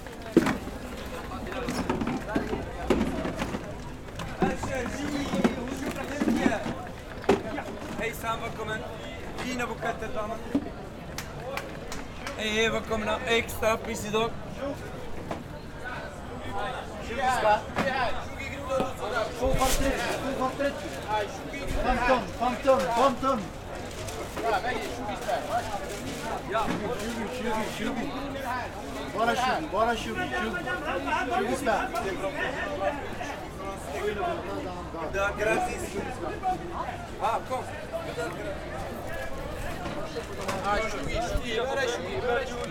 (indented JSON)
{
  "title": "Norrmalm, Stockholm, Suecia - Flower market",
  "date": "2016-08-13 19:44:00",
  "description": "Flors barates!\nCheap flowers!\nFlores baratas!",
  "latitude": "59.33",
  "longitude": "18.06",
  "altitude": "26",
  "timezone": "Europe/Stockholm"
}